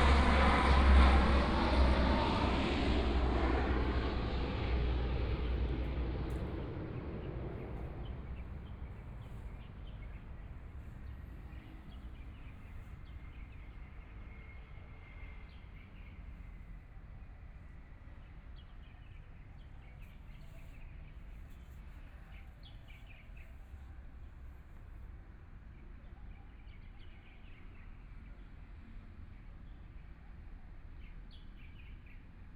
中琉紀念公園, Hualien City - in the Park
Traffic Sound, Birdsong, Aircraft flying through
Please turn up the volume
Binaural recordings, Zoom H4n+ Soundman OKM II
Hualien County, Taiwan, 24 February 2014